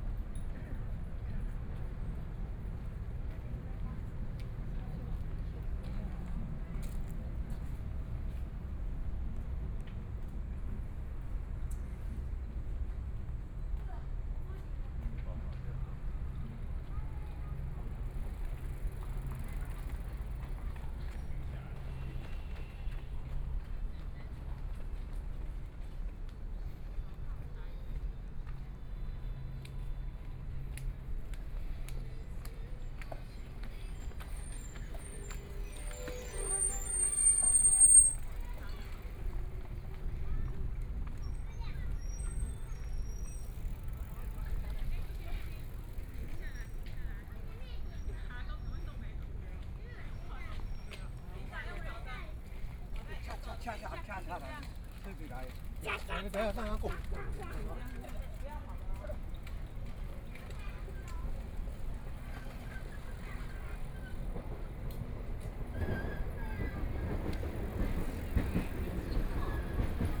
淡水區竿蓁里, New Taipei City - Take a walk
Walking along the track beside the MRT, Take a walk, Bicycle voice, MRT trains
Please turn up the volume a little. Binaural recordings, Sony PCM D100+ Soundman OKM II
Danshui District, New Taipei City, Taiwan